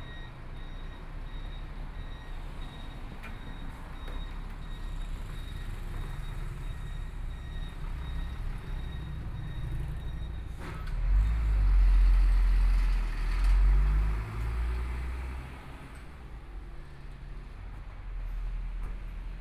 walking on the Road, Traffic Sound, Environmental sounds, Sound from various of shops and restaurants
Please turn up the volume
Binaural recordings, Zoom H4n+ Soundman OKM II
Jian Township, Hualien County, Taiwan, 24 February 2014